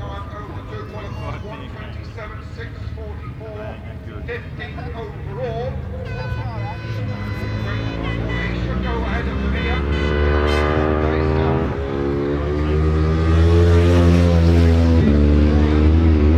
World Superbikes 2000 ... Superpole ... part one ... one point stereo mic to minidisk ...
Longfield, United Kingdom